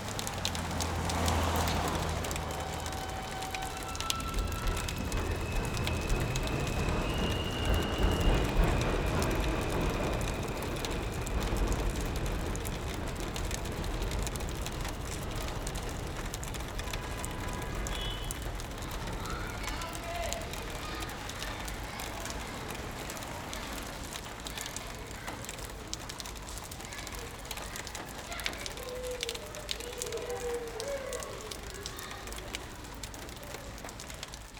Woodward Ave, Ridgewood, NY, USA - Water dripping from the M train platform
Sounds of water dripping on the road from the elevated M train station (Forest Ave).
In a twisted turn of events, a car parks under the stream of water, changing the sound of the dripping water.